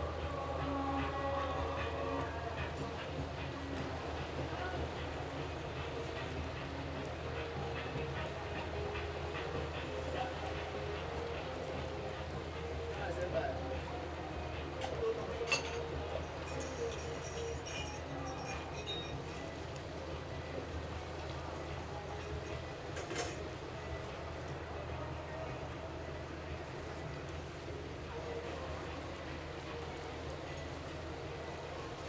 Ha-Hagana St, Acre, Israel - By the sea Acre
Sea, small waves, music, cafe, drone, murmur